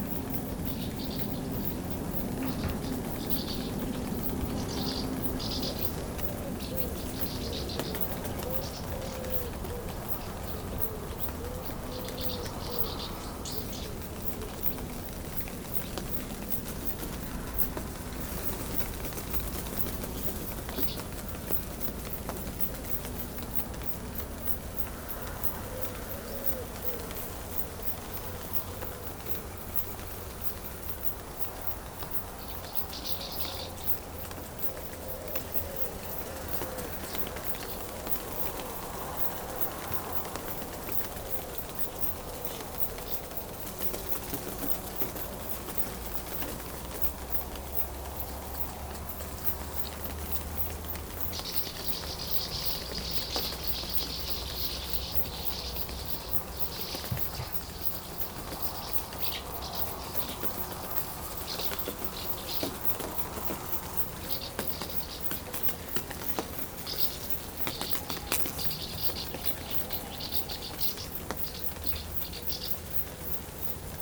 The Lombron farm is a huge gardening farm, producing a gigantic diversity of vegetables. Into the greenhouses, there's a lot of insects, prisonners into the tarpaulins. These insects try to go out, it makes the innumerable poc-poc sounds on the transparent tarpaulins. Outside, swallows wait, and regularly catch every insect going out.
Lombron, France, 14 August 2017, 20:20